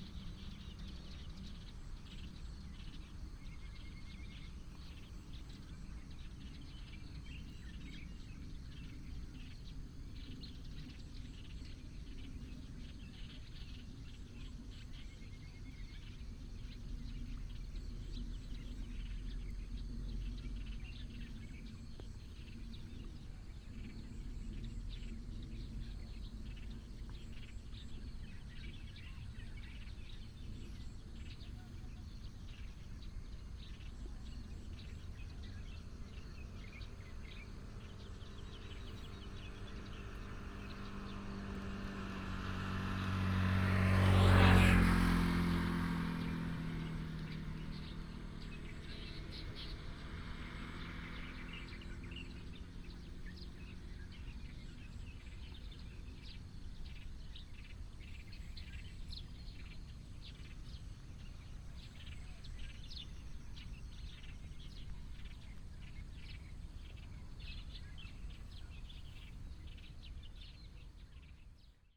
Ln., Zhongshan Rd., Bade Dist. - Morning farmland
Morning farmland, Birds sound, traffic sound
26 July 2017, ~06:00